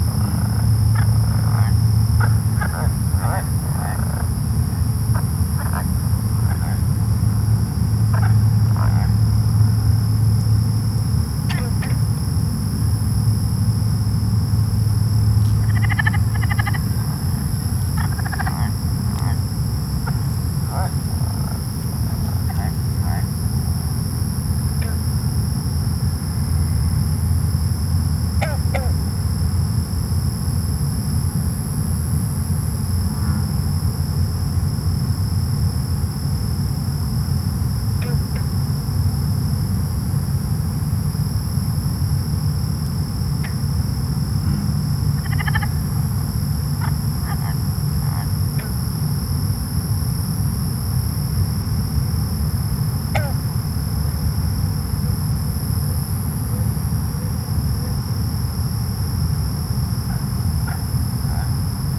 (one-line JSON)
{"title": "The Pond, Houston Arboretum Nature Center, Houston, Texas - In Search of the Pulse", "date": "2013-03-22 01:00:00", "description": "One of my favorite places in Houston. Been coming here since I was a child, doing my best to escape the city; always marveling at the dense blend of natural and urban sounds. Sometimes it sounds like a battle, other times harmonious. I went to this place looking for what I think is the pulse of my city for The Noise Project (way beyond the agreed upon time frame to post submissions!). Note the brown trees. They have all since died and fallen after several years of hard drought. It looks and sounds much different now...\nCA-14 omnis (spaced)> Sony PCM D50", "latitude": "29.76", "longitude": "-95.45", "altitude": "27", "timezone": "America/Chicago"}